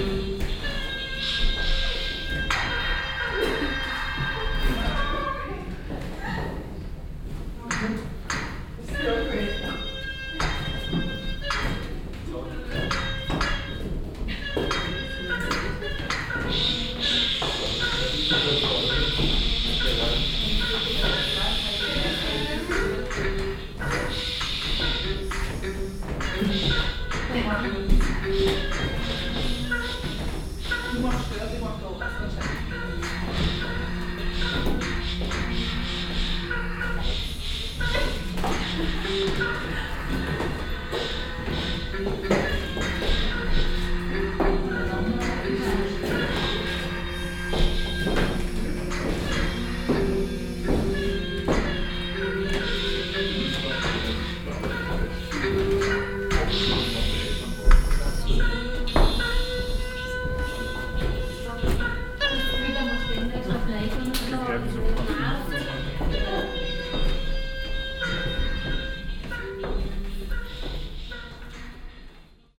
basel, dreispitz, shift festival, zelt, installation im zugwagon - basel, dreispitz, shift festival, installation im zugwagon 02
von denise kratzer&jan jänni in einem zugwagon + publikum
soundmap international
social ambiences/ listen to the people - in & outdoor nearfield recordings